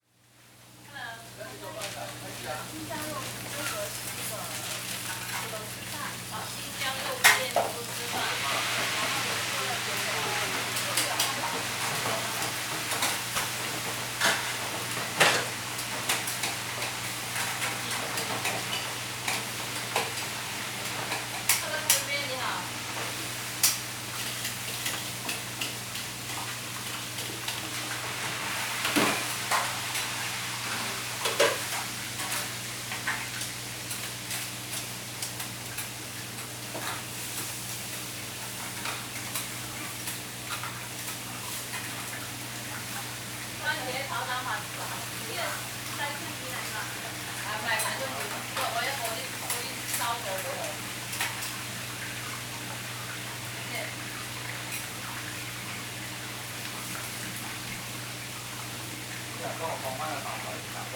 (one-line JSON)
{"title": "Captain Bens, Upper Riccarton, Christchurch, New Zealand - Cooking at Captain Bens take away food", "date": "2013-05-02 18:30:00", "description": "Recorded next to the counter while waiting for an order of Chinese food, using Zoom H4n.", "latitude": "-43.53", "longitude": "172.58", "altitude": "18", "timezone": "Pacific/Auckland"}